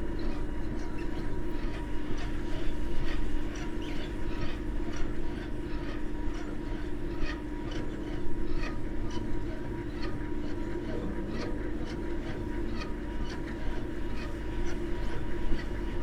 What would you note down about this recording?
wind turbine ... lavalier mics in a parabolic ...